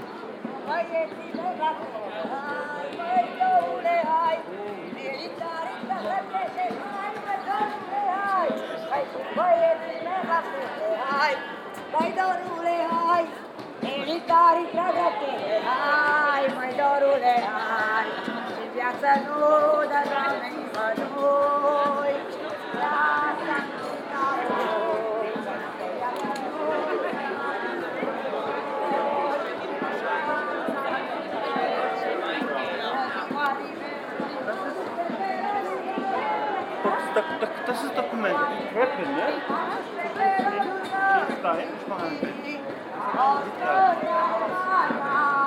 Ob. Königsstraße, Kassel, Germany - A postcard from Kassel
Tascam DR-05 + Soundman OKM I
Hessen, Deutschland, 7 August 2022